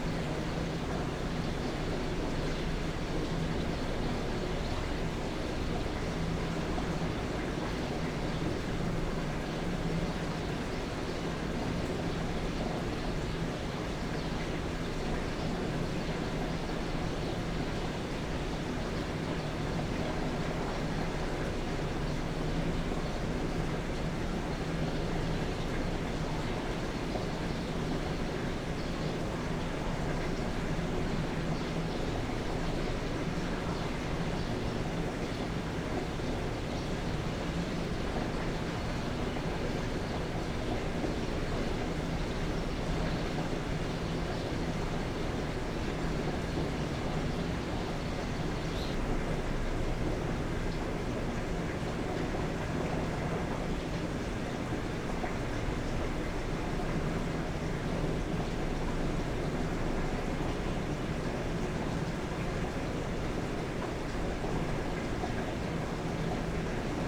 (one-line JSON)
{"date": "2020-01-25 15:00:00", "description": "This tide/irrigation control gate has 5 large steel plate gates...they swing freely at the bottom end like free-reeds in a Sho or harmonica...one gate had an interesting modulating low tone happening, so I recorded the sound in the gap between the steel plate gate and the concrete housing structure of all 5 gates...", "latitude": "34.88", "longitude": "127.48", "altitude": "2", "timezone": "Asia/Seoul"}